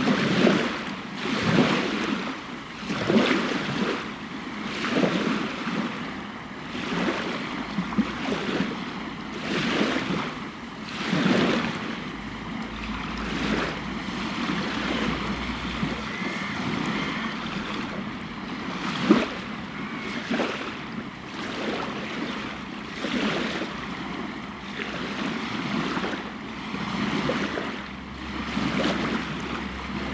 Rostrevor, Northern Ireland - Tide Going Out
Recorded with a pair of DPA 4060s and a Marantz PMD661